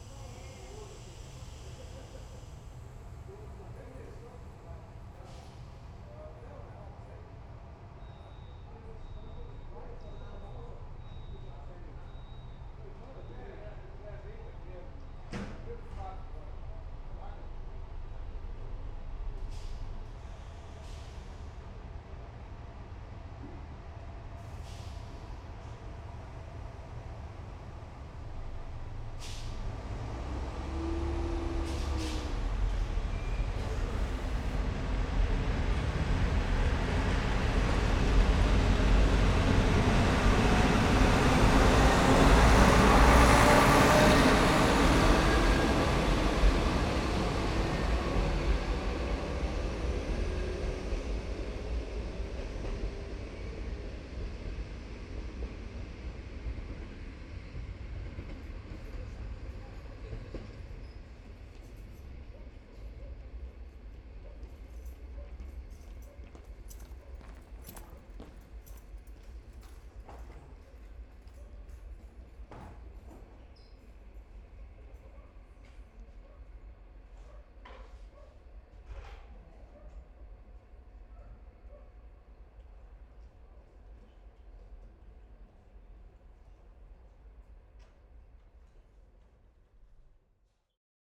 Nova Gorica, Nova Gorica, Slovenija - Train station Nova Gorica Departure to Bohinjska Bistrica
Departure of passenger train at 11:20 from Nova Gorica to Bohinjska Bistrica. Zoom H5 with LOM Uši Pro.